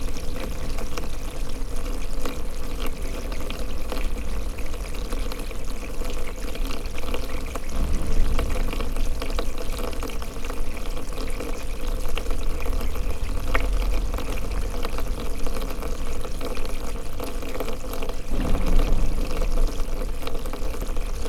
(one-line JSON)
{"title": "Centre, Ottignies-Louvain-la-Neuve, Belgique - Underground pipe", "date": "2016-03-24 16:30:00", "description": "Louvain-La-Neuve is an utopian city, where surface is pedestrian and underground is sights to cars. Also, the underground places are crossed with myriad of big pipes ; inside there's water, drains, gas, electricity, etc... This is a recording of one of these pipes.", "latitude": "50.67", "longitude": "4.61", "altitude": "118", "timezone": "Europe/Brussels"}